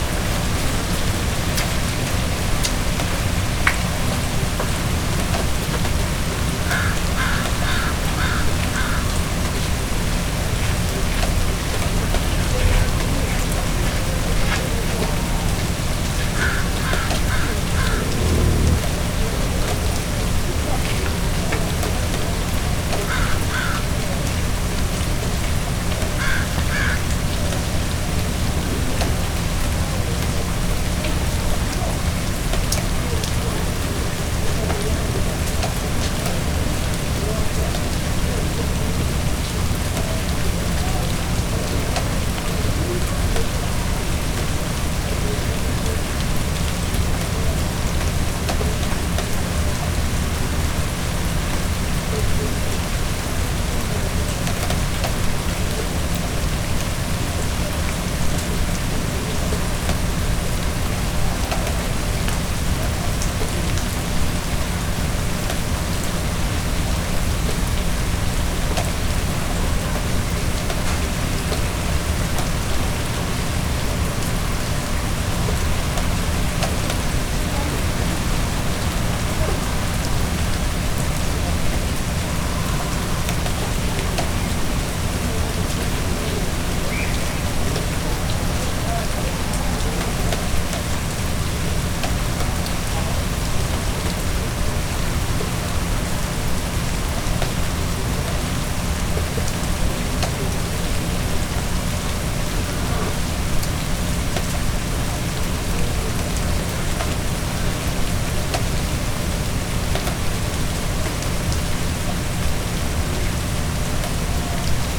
West Point Grey Academy, Vancouver, BC, Canada - Rivière atmosphérique
Une pluie constante consume les dernières traces de glace, résidu d'un Noël anormalement blanc.